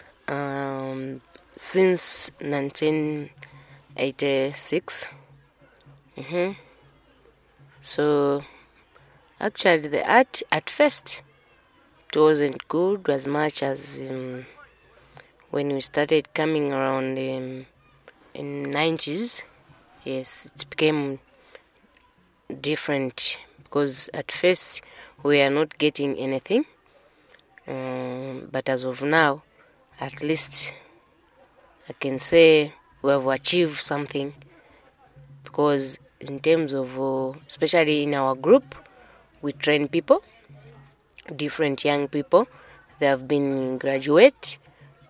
…we continued in Mary’s friendly car, making a recording with Tasila Phiri, a dancer, choreographer and trainer member of ZAPOTO. Like Mary, Tasila is based at Kamoto Community Arts and often collaborates with Mary in their projects. Here she tells, how things started for her…
Lusaka, Zambia, 26 November